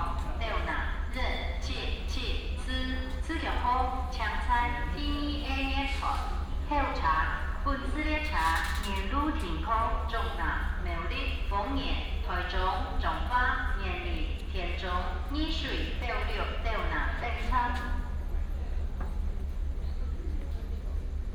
新竹火車站, Hsinchu City - walking into the Station
From the station hall, Walk into the station platform, Station information broadcast
6 April 2017, 19:01